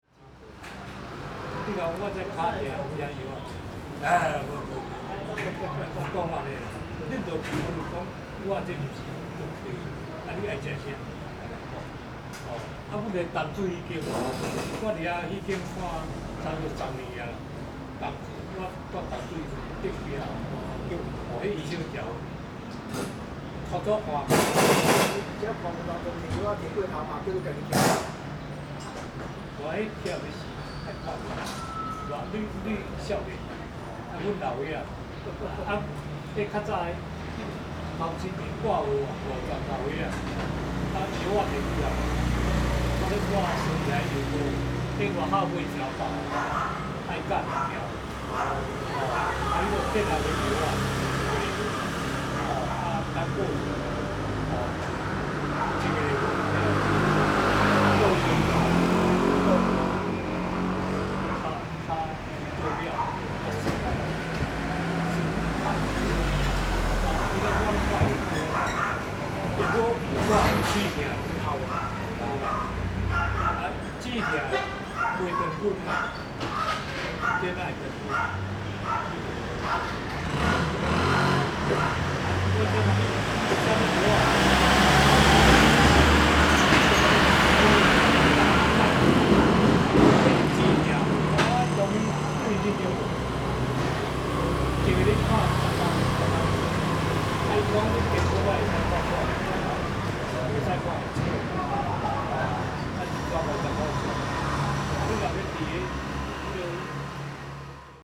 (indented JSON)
{
  "title": "Ln., Yanji St., Tucheng Dist., New Taipei City - Motorcycle repair shop",
  "date": "2012-02-16 15:07:00",
  "description": "Motorcycle repair shop, Traffic Sound\nZoom H4n +Rode NT4",
  "latitude": "24.98",
  "longitude": "121.47",
  "altitude": "26",
  "timezone": "Asia/Taipei"
}